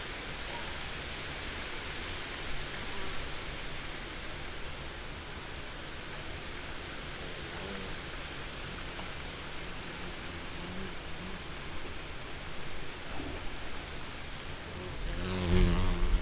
Каптаруны, Беларусь - Kaptaruni by day
wind in the nearby Sleepy Hollow
collection of Kaptarunian Soundscape Museum
23 August, 14:17